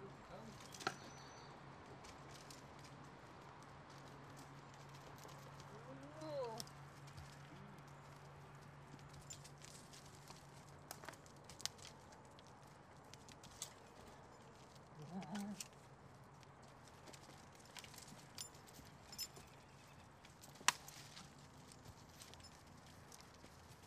Mountain blvd. Oakland - Silence of the goats
rule Nr.1 is - ..If you do show up in front of a goat with the sound recorder, she/he will remain silent .!!!
Alameda County, California, United States of America, 26 March, ~06:00